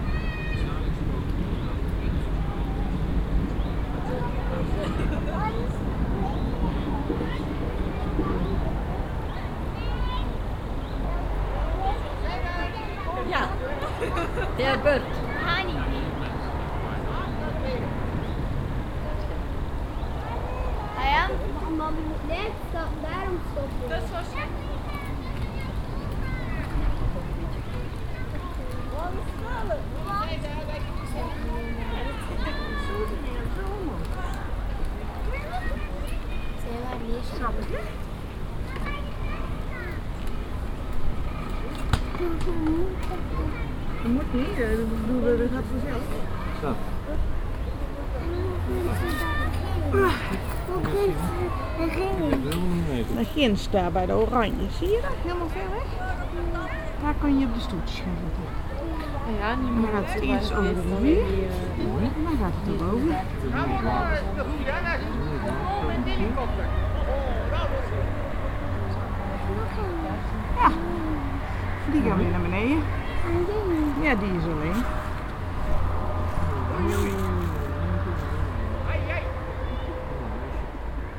On a path in the forest, watching the downhill city and river. A chairlift with talking passengers floating constantly above my head. Finally I am joined by a dutch tourist family.
Vianden, Berg, Wald, Sessellift
Auf einem Weg im Wald Blick auf die Stadt und den Fluss im Tal. Ein Sessellift mit sich unterhaltenden Passagieren schwebt ständig über meinem Kopf. Am Ende schließt sich mir eine niederländische Touristenfamilie an.
Vianden, forêt de montagne, télésiège
Sur une promenade dans la forêt. Vue de la ville et la vallée du fleuve. Des télésièges avec passagers parlants au-dessus de ma tête. A la fin, une famille de touristes hollandais se joint à moi.
Project - Klangraum Our - topographic field recordings, sound objects and social ambiences
Vianden, Luxembourg